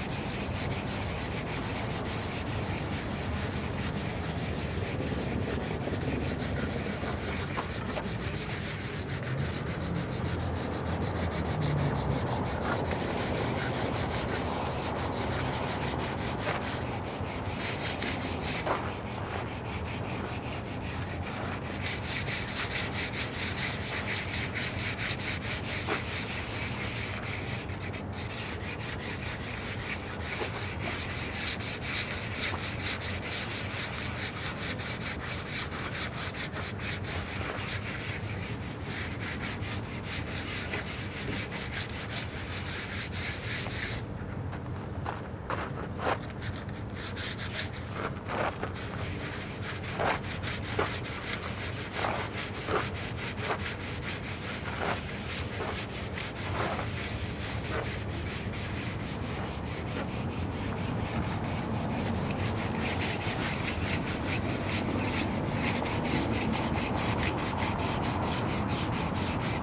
workers cleaning the wooden park benches with sandpaper
Tallinn, Estonia, 21 April 2011